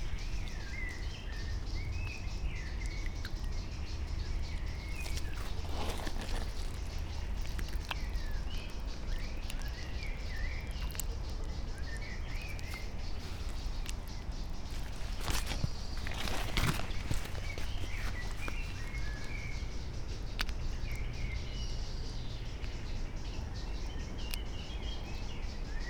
Mariborski otok, river Drava, tiny sand bay under old trees - high waters, almost still